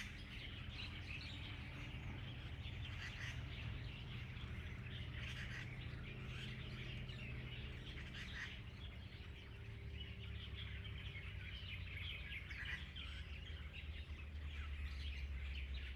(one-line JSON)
{"title": "豐田里, Taitung City - Birdsong and Train", "date": "2014-09-09 10:07:00", "description": "Birdsong, Traffic Sound, under the Bridge, Train traveling through, Very hot weather\nZoom H2n MS+ XY", "latitude": "22.76", "longitude": "121.09", "altitude": "60", "timezone": "Asia/Taipei"}